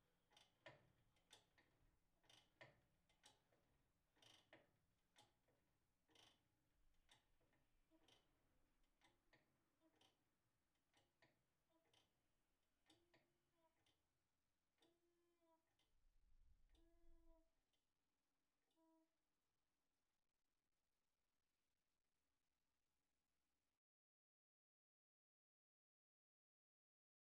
Le Bourg, La Hoguette, France - La Hoguette - Église Saint-Barthélemy
La Hoguette (Calvados)
Église Saint-Barthélemy
Le Glas
Prise de son : JF CAVRO
Normandie, France métropolitaine, France, 11 September